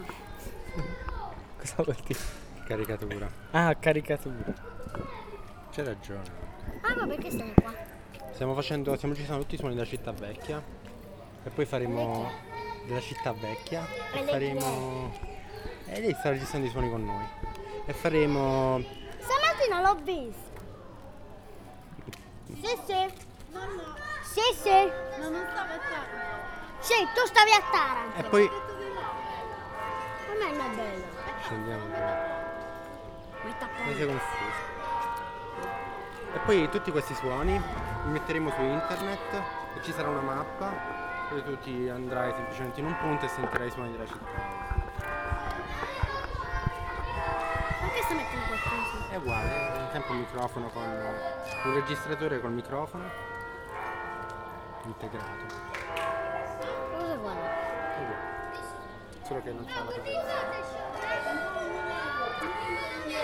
Taranto, Province of Taranto, Italy - Children curious about us, our friends and the sound recoder
Children from the old town of Taranto, playing in Largo San Martino, check at me, fabio and other people with us. They ask us what we were doing and other information, then they get curious about the recorder and start to interact.
Recorded for Taranto Sonora, a project headed by Francesco Giannico.